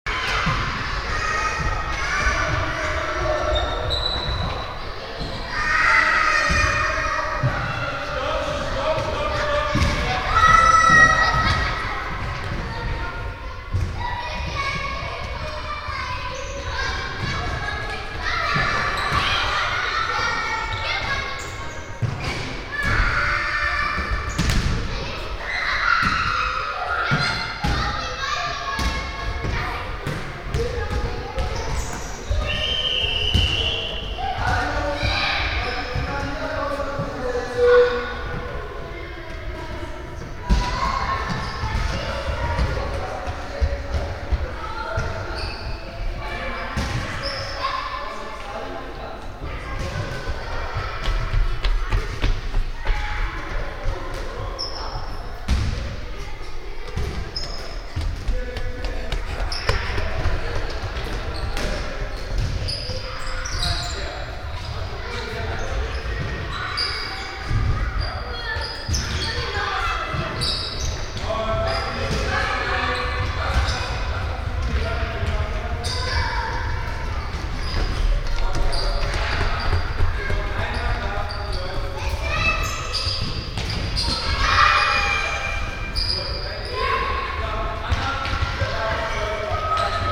{"title": "refrath, at market place, gym hall", "date": "2009-06-26 11:54:00", "description": "soundmap nrw: social ambiences/ listen to the people in & outdoor topographic field recordings", "latitude": "50.96", "longitude": "7.11", "altitude": "75", "timezone": "Europe/Berlin"}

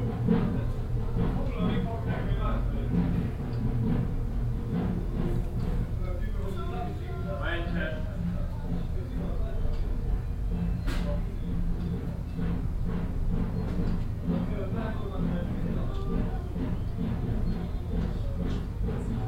{"title": "vianden, chairlift, valley station", "date": "2011-08-09 21:39:00", "description": "At the valley station of the chairlift. The sound of the drum corp from the castle reflecting in the valley, some music from a radio of the chairlift guards and the constant hum of the chairlift motor generator.\nVianden, Sessellift, Talstation\nAn der Talstation des Sessellifts. Das Geräusch von den Trommlern vom Schloss hallt im Tal wider, Musik aus einem Radio des Sesselliftwärters und das konstante Brummen des Sessellift-Motors.\nVianden, télésiège\nÀ la station inférieure du télésiège. Le son des joueurs de tambour qui se répercute dans la vallée depuis le château, la musique de la radio des agents du télésiège et le bourdonnement du générateur du moteur du télésiège.\nProject - Klangraum Our - topographic field recordings, sound objects and social ambiences", "latitude": "49.94", "longitude": "6.21", "altitude": "210", "timezone": "Europe/Luxembourg"}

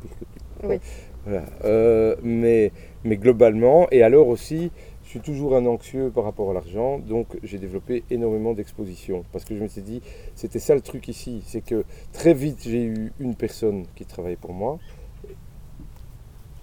{"title": "Court-St.-Étienne, Belgique - Hydrangeas cultivator", "date": "2016-07-19 11:00:00", "description": "Thierry de Ryckel speaks about his passion and work. He's an Hydrangeas cultivator. His plant nursery has 30.0000 hydrangeas and hemerocalles.", "latitude": "50.62", "longitude": "4.54", "timezone": "Europe/Brussels"}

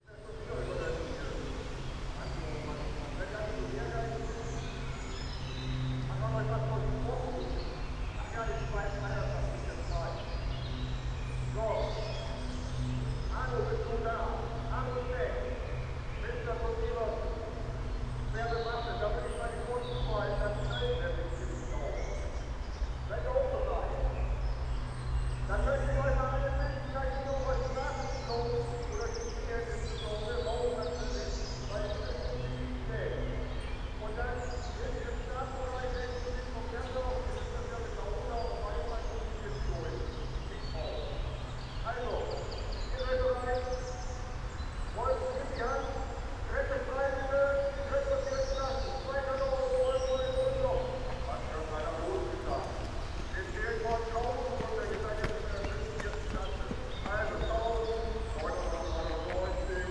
{"title": "Eversten Holz, Oldenburg - Brunnenlauf, kids marathon", "date": "2017-06-04 10:45:00", "description": "Oldenburg, Eversten Holz park, sound of starting kids marathon in the distance\n(Sony PCM D50, Primo EM172)", "latitude": "53.14", "longitude": "8.20", "altitude": "19", "timezone": "Europe/Berlin"}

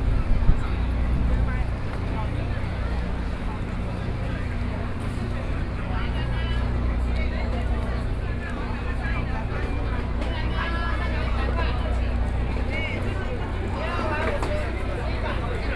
{"title": "National Dr. Sun Yat-sen Memorial Hall - Holiday Plaza", "date": "2013-09-29 16:37:00", "description": "Holiday Plaza on the market with the crowd, Square crowd of tourists and participants from all over the protests of the public, Sony PCM D50 + Soundman OKM II", "latitude": "25.04", "longitude": "121.56", "altitude": "15", "timezone": "Asia/Taipei"}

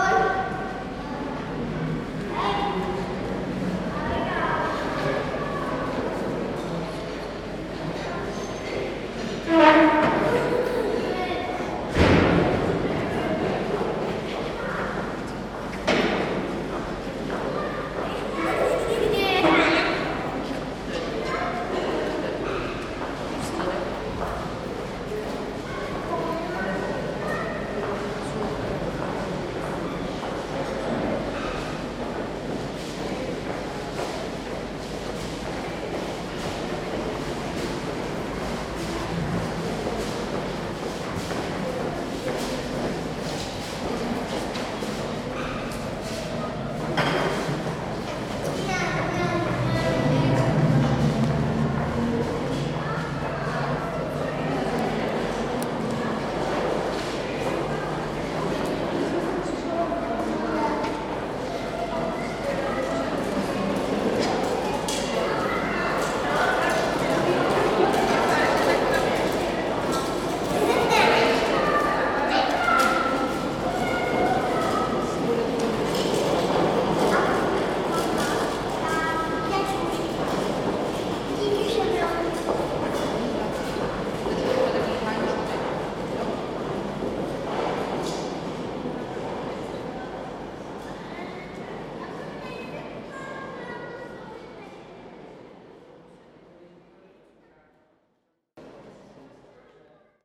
{"title": "Václavská pasáž - Café", "date": "2013-06-02 14:30:00", "description": "In the café of the Václavská passage.", "latitude": "50.07", "longitude": "14.42", "altitude": "213", "timezone": "Europe/Prague"}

